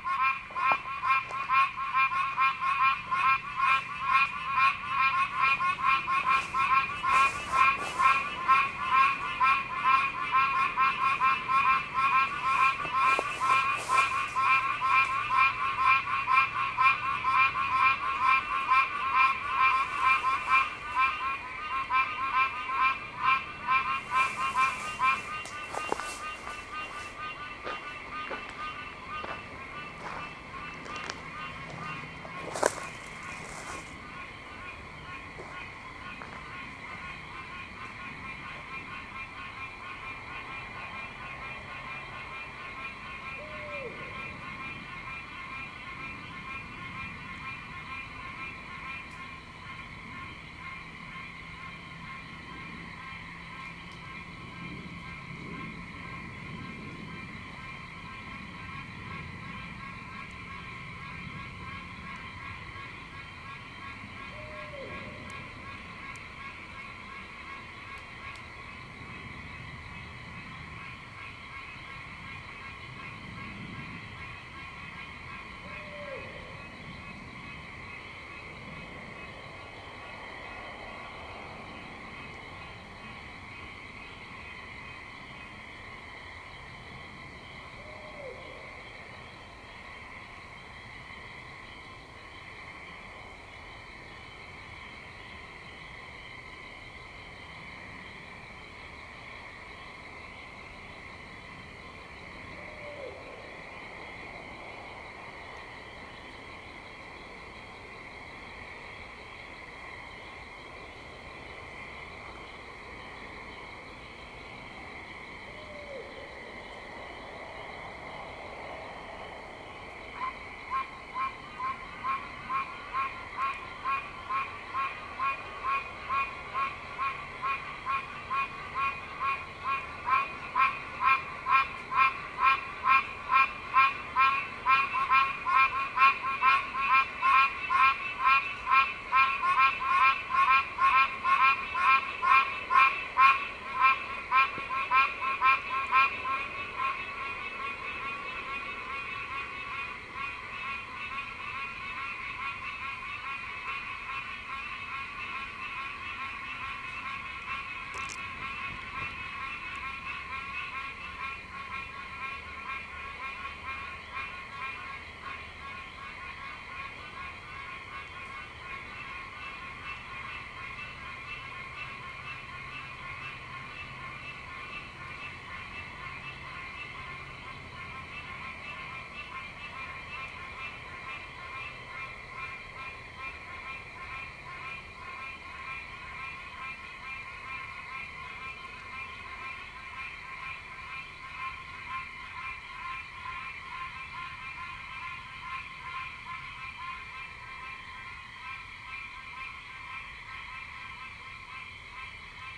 features threatened frog species, the pine barrens tree frog, and the short call of a barred owl
Port Norris, NJ, USA, 29 April 2017, 21:15